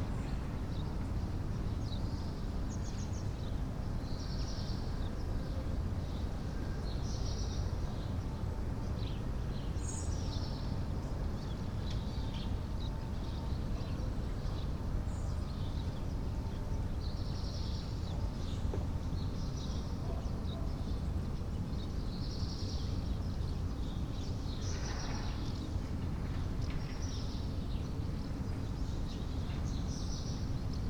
Mariánské Radčice, Tschechische Republik - Martins and Black Redstarts in the Morning
Sunday morning in front of the monestary.